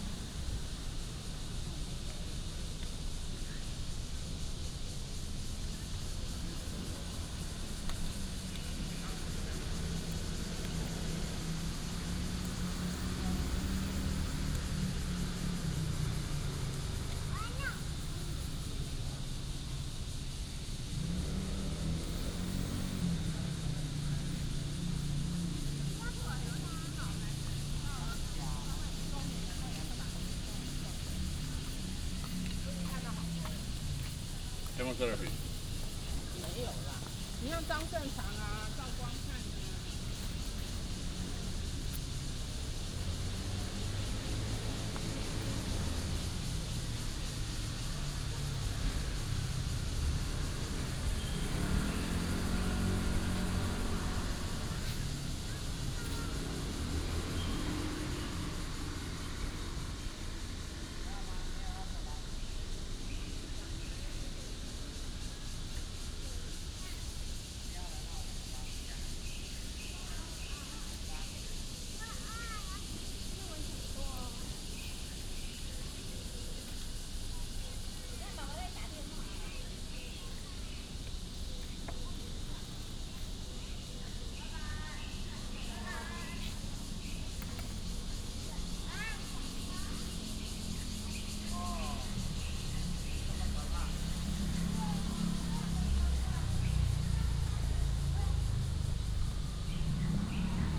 in the Park, Cicadas cry, Bird calls, Traffic Sound
Taipei City, Taiwan, 28 June 2015, 18:29